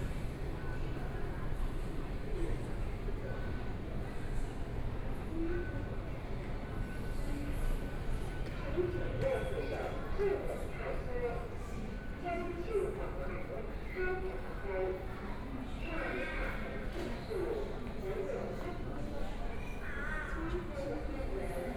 Banqiao Station, Taiwan - Walking through the station
Walking through the stationFrom the train station hall, To MRT station platform
Please turn up the volume a little
Binaural recordings, Sony PCM D100 + Soundman OKM II
1 March, 17:00